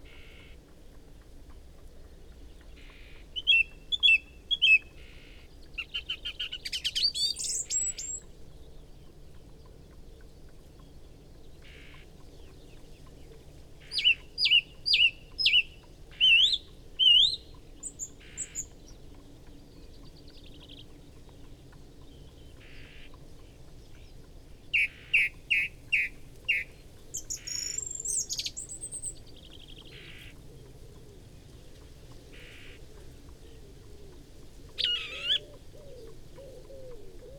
Luttons, UK - Singing song thrush ... horse and rider approaching ...
Song thrush singing ... horse and rider approaching ... parabolic ... background noise ... song and calls from whitethroat ... wren ... chaffinch ...